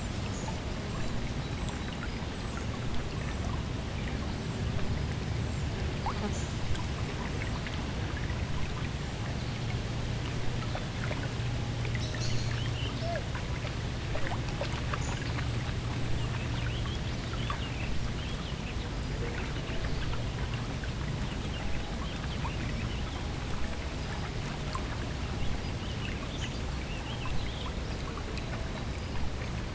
Taylor Creek Park, Toronto, ON, Canada - WLD 2020 Sounds from the creek
Recorded in the middle of Taylor-Massey Creek. Sounds of birds (mainly red-winged blackbirds), dogs, the creek, occasional passers-by on the recreational trail, susurration of leaves.